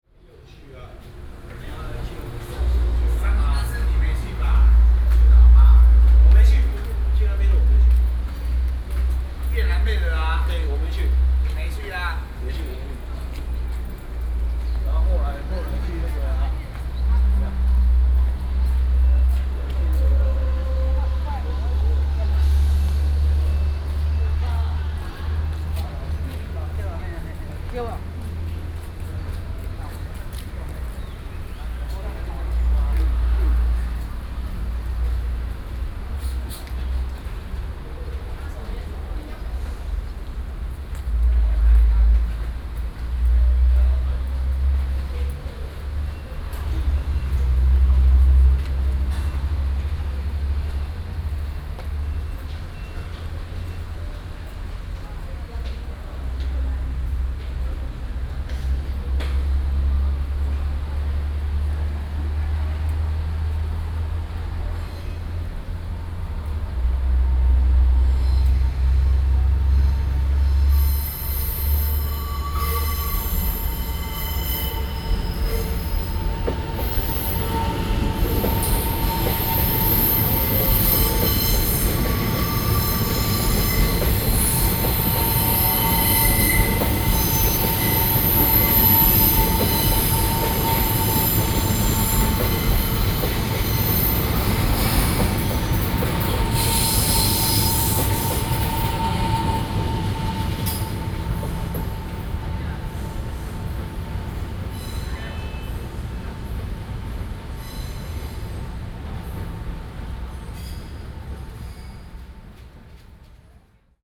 Keelung, Taiwan - Train traveling through
Train traveling through, Sony PCM D50 + Soundman OKM II
台北市 (Taipei City), 中華民國